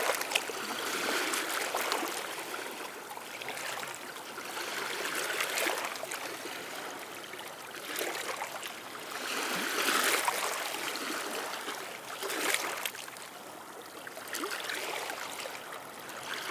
Rivedoux-Plage, France - The sea
Sound of the sea at the quiet Rivedoux beach.